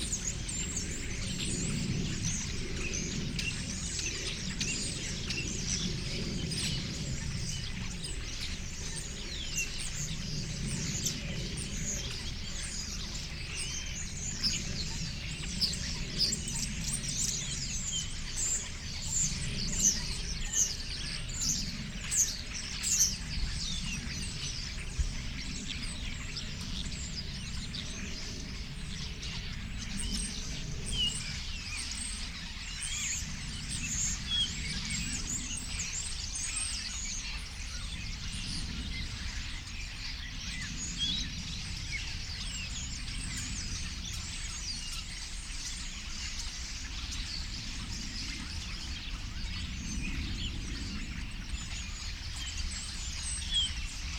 Kirchmöser Ost - starlings /w air traffic noise
many Starlings gathering in the trees around, unfortunately I've missed the moment a minute later, when they all flew away in one great rush. Constant rumble of aircraft on this Sunday afternoon in early autumn.
(Sony PCM D50, Primo EM172)
Brandenburg, Deutschland, 25 September 2022, ~13:00